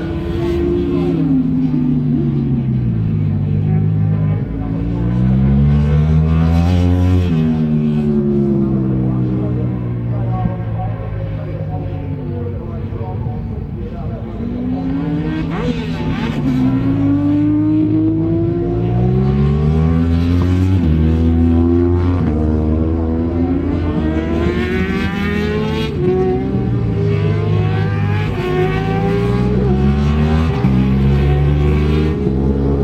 BSB 2001 ... Superbikes ... warm up ... one point stereo mic to minidisk ... commentary ... sort of ...